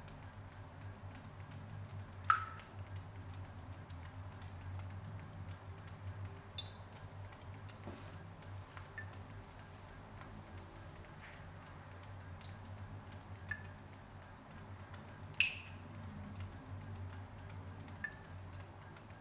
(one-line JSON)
{"title": "Chapel of the Chimes, Oakland, CA, USA - Chapel of the Chimes Fountain", "date": "2016-01-10 03:15:00", "description": "Recorded with a pair of DPA 4060s and a Marantz PMD661", "latitude": "37.83", "longitude": "-122.25", "altitude": "51", "timezone": "America/Los_Angeles"}